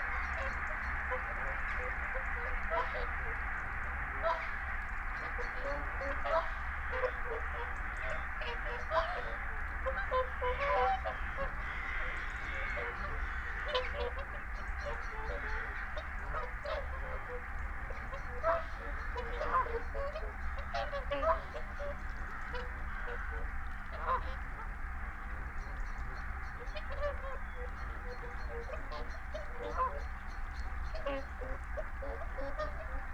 Dumfries, UK - barnacle geese flyover ...
barnacle geese flyover ... xlr sass to zoom h5 ... bird calls ... mallard ... canada ... wigeon ... whooper swan ... shoveler ... wigeon ... carrion crow ... blackbird ... time edited extended unattended recording ...
31 January 2022, 17:25